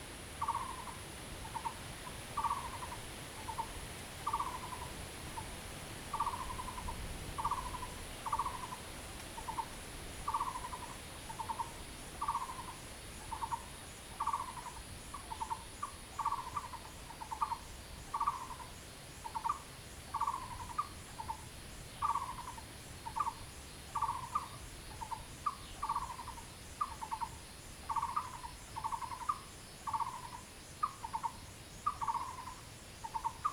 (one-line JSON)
{"title": "桃米生態村, 桃米里 - In the woods", "date": "2015-06-10 11:35:00", "description": "Frogs sound, Bird calls, In the woods\nZoom H2n MS+XY", "latitude": "23.94", "longitude": "120.92", "altitude": "525", "timezone": "Asia/Taipei"}